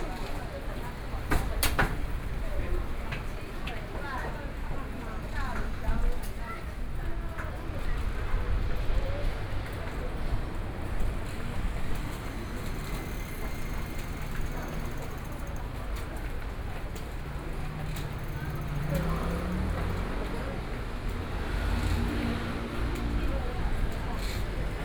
Liaoning St., Taipei City - Walking in the streets
Traffic Sound, Footsteps sound, Walking in the streets, Various shops sound
Taipei City, Taiwan, 2 May